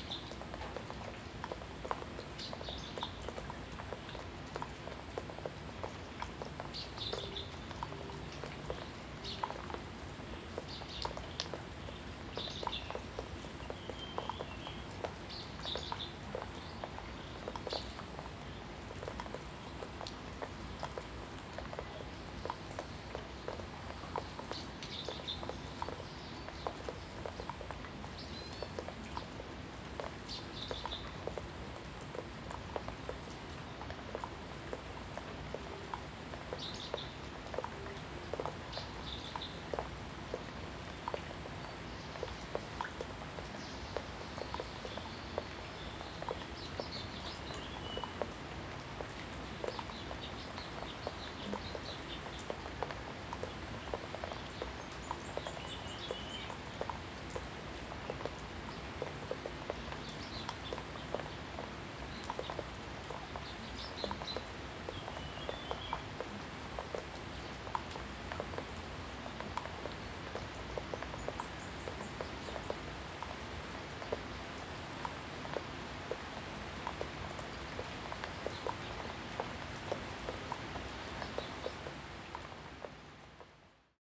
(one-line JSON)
{"title": "埔里鎮桃米里水上巷3-3, Taiwan - raindrop", "date": "2016-04-27 06:38:00", "description": "raindrop, Bird sounds, Traffic Sound\nZoom H2n MS+ XY", "latitude": "23.94", "longitude": "120.92", "altitude": "480", "timezone": "Asia/Taipei"}